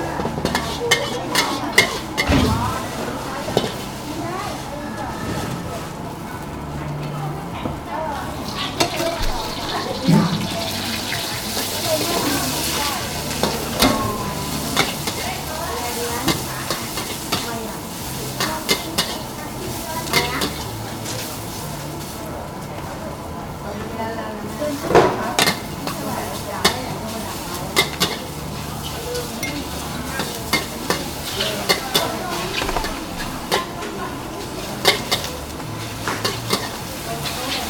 Cooking Khao Pat
WLD, Bangkok, Thailand, cooking, market, food